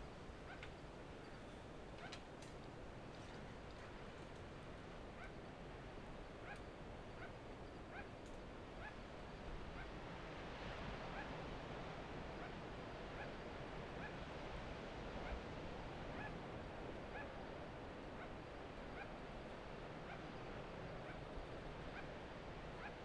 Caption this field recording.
Sugar glider (Petaurus breviceps) calling at night with the wind and the waves and the planes. Recorded with an AT BP4025 into a Tascam DR-680.